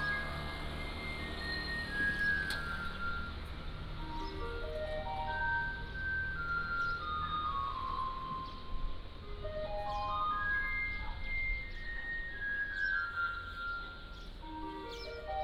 馬祖村, Nangan Township - In the Square
In the Square, Small village, Next to the temple, Traffic Sound